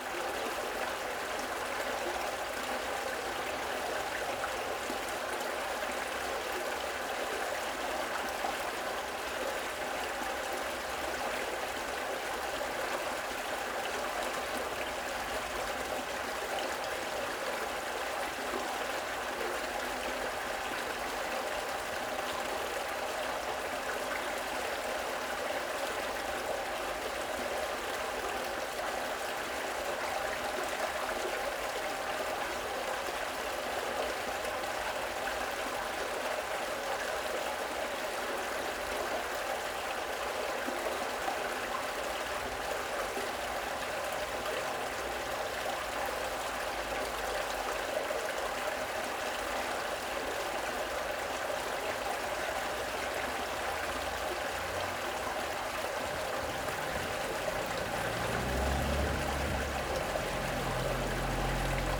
Ghost of the underground stream, Údolní, Praha, Czechia - Ghost of the underground stream
The presence of the underground stream flowing underground can be hear occasional through drain grills in the road. It's path can be followed by ear by listening out for such clues.
Praha, Česko, April 2022